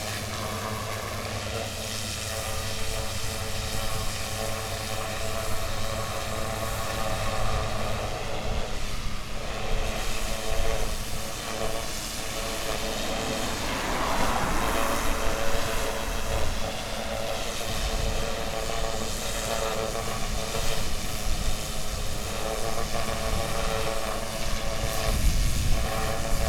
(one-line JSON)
{"title": "Poznan, Podolany district Czorsztynska street - roof cleaning", "date": "2018-04-23 12:25:00", "description": "man washing a roof of a detached house with a power washer. bit wind distortion around 1:45. (sony d50)", "latitude": "52.45", "longitude": "16.88", "altitude": "88", "timezone": "Europe/Warsaw"}